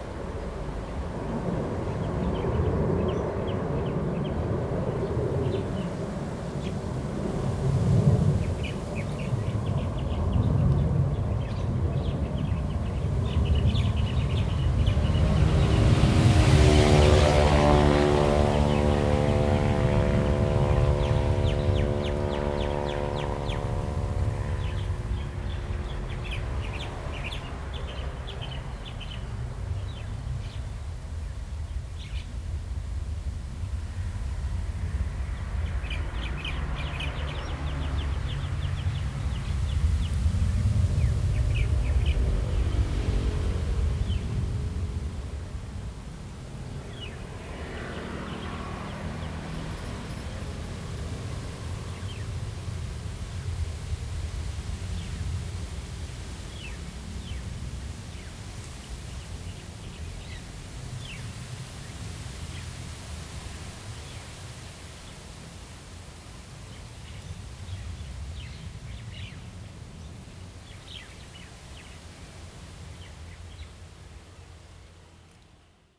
Traffic Sound, Birds singing, Aircraft flying through
Zoom H2n MS +XY